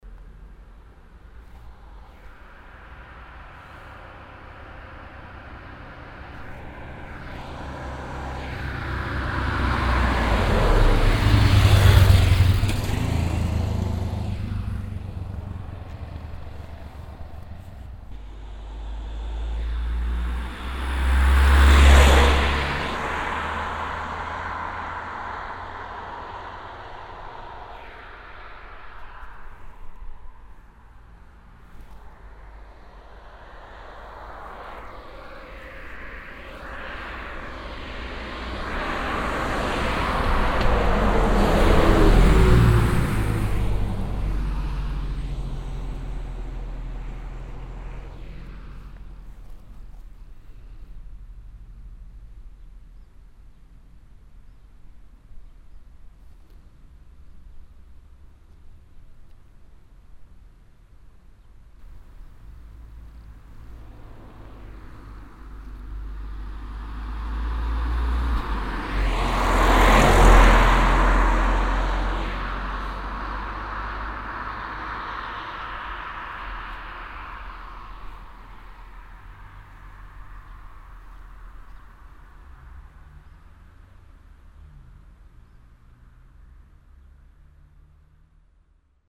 kautenbach, through road 322, traffic
more traffic sound - here a row of cars driving in both directions of the street.
Kautenbach, Durchgangsstraße 322, Verkehr
Das Geräusch von Verkehr. Hier ein einzelnes Auto gefolgt von Motorrädern.
Kautenbach, rue traversante n°322, trafic
Le bruit du trafic Ici une voiture seule suivie de mot
Project - Klangraum Our - topographic field recordings, sound objects and social ambiences